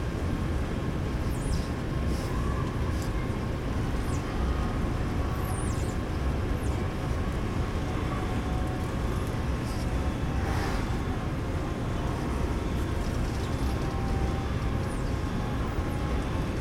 Calgary International Airport, Calgary, AB, Canada - Baggage Carousel
Black squeaky rubber against stainless steel. Baggage claim carousel #4 with no baggage on it. Zoom H4n Recorder